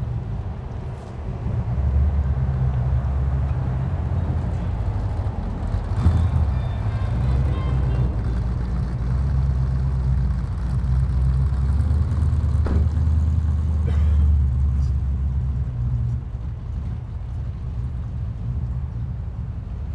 eka joins us near the end of the recording and we continue our errand running stardom